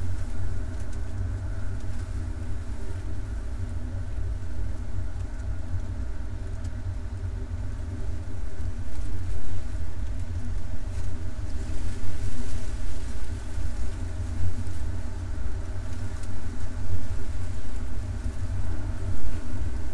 Utena, Lithuania, in tube
some abandoned empty metallic object on a ground. small microphones